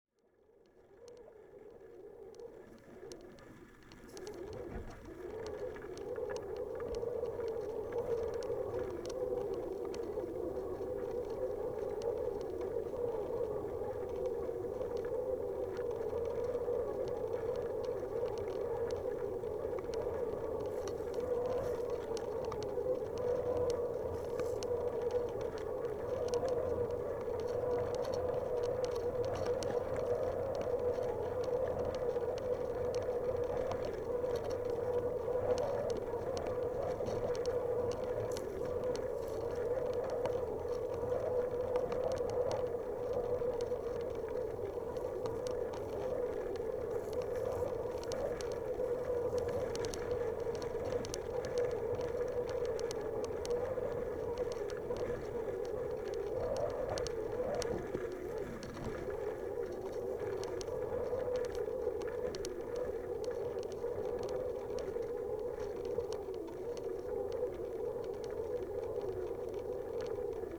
Aukštaitija National Park, Lithuania, old fishing net - old fishing net
recording from ongoing Debris Ecology project: contact microphones on found object - old fishing net in the wind
Ignalina district municipality, Lithuania, 29 April, 15:45